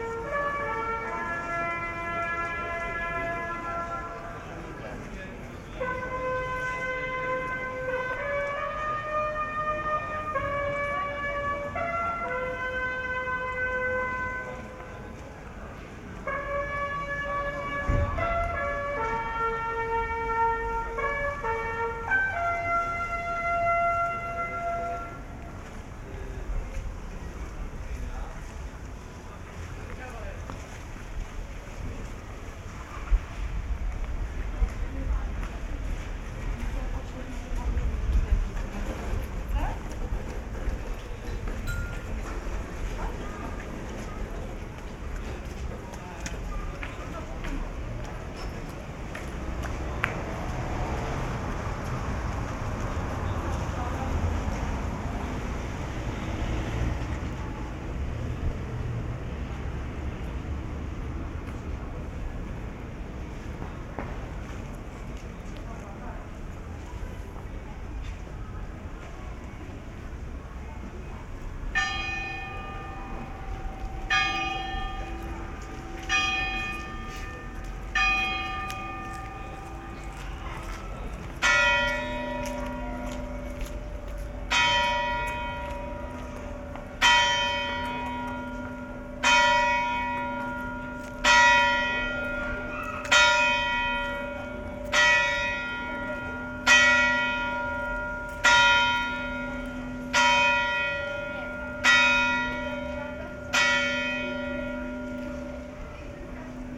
Bugle Call, Rynek, Tarnów, Poland - (668 BI) Hourly Bugle call at Tarnów market square
Binaural recording of an hourly bugle call at market square in Tarnów. Unfortunately it occurred a few minutes earlier than it supposed to.
Recorded with Sound Devices MixPre 6 II and DPA 4560.
województwo małopolskie, Polska, 2 August 2020, 11:57